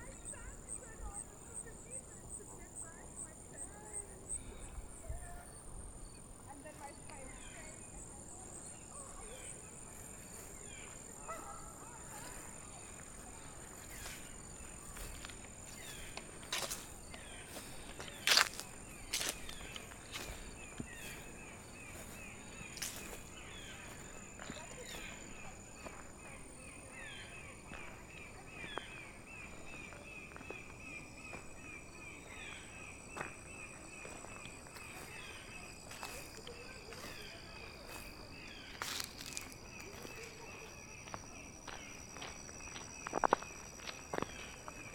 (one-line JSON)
{"title": "Trail, Ouabache State Park, Bluffton, IN, USA - Sounds heard on Trail 4, Ouabache State Park, Bluffton, IN, 46714, USA (Sound recording by Sharon Donlon)", "date": "2019-10-20 15:10:00", "description": "Sounds heard on Trail 4, Ouabache State Park, Bluffton, IN, 46714, USA (Sound recording by Sharon Donlon)", "latitude": "40.72", "longitude": "-85.11", "altitude": "257", "timezone": "America/Indiana/Indianapolis"}